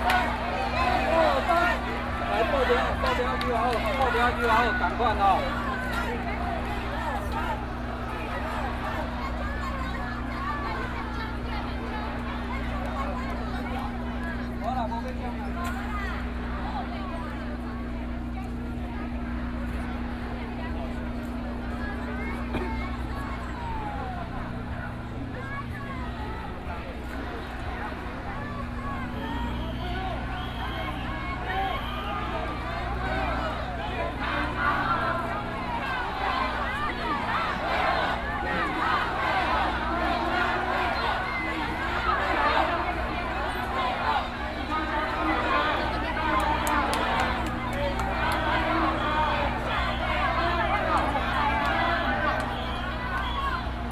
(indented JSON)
{
  "title": "Ministry of the Interior, Taipei City - Nonviolent occupation",
  "date": "2013-08-18 22:48:00",
  "description": "To protest the government's dereliction of duty and destruction of human rights, Zoom H4n+ Soundman OKM II",
  "latitude": "25.04",
  "longitude": "121.52",
  "altitude": "11",
  "timezone": "Asia/Taipei"
}